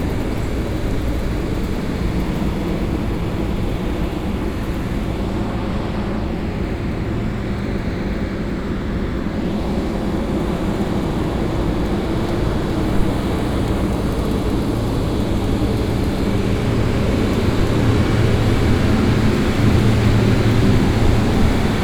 Fuengirola, España - Maquina de hielo de la lonja / Fish market ice machine
Ruido de la maquinaria / Noise of the machinery